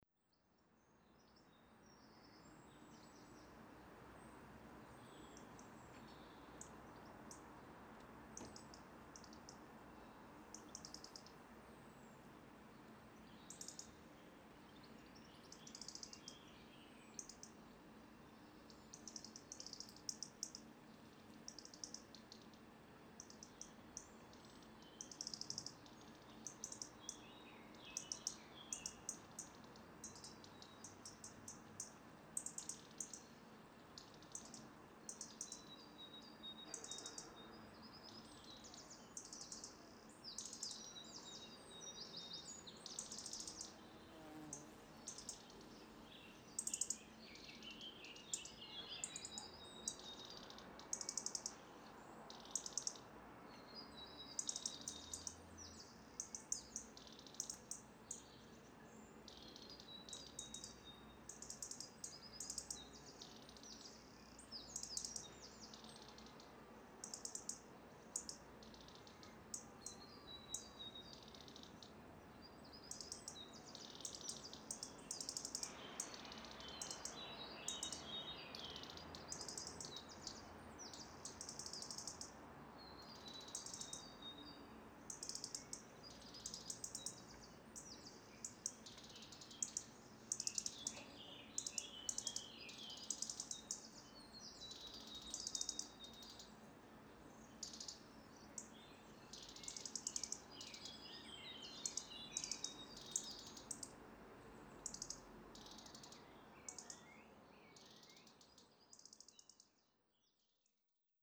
46 St. Francis Road
Recorder during the flypath closure week due to the ash cloud.
Recorder: Edirol R4Pro
Microphones Oktava MK-012 in Bluround® setup
18 April, 09:45